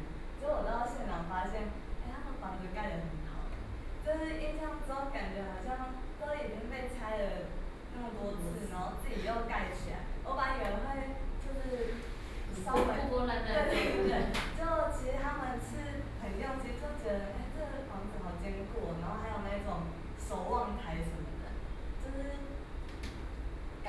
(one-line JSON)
{"title": "tamtamART.Taipei, Taipei City - Forum", "date": "2013-06-16 17:03:00", "description": "Forum, Share hiking eastern Taiwan environmental changes and problems, Sony PCM D50 + Soundman OKM II", "latitude": "25.05", "longitude": "121.52", "altitude": "24", "timezone": "Asia/Taipei"}